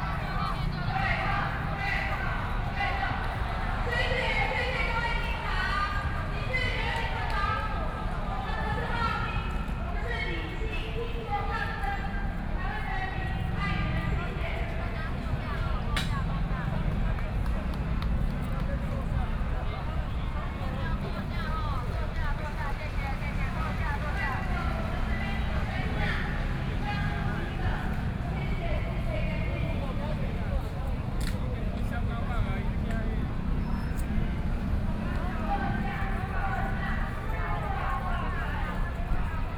Zhongxiao E. Rd., Taipei City - Occupied Executive Yuan
Student activism, Walking through the site in protest, People and students occupied the Executive Yuan